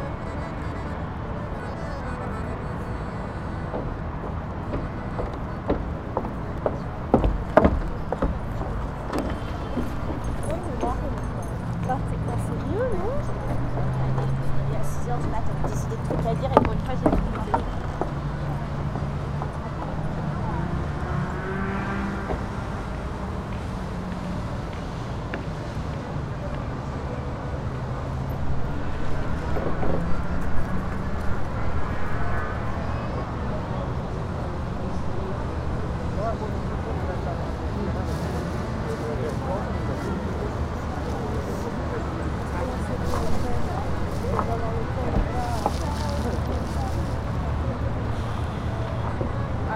paris pont des arts, tourists, akkordeon, water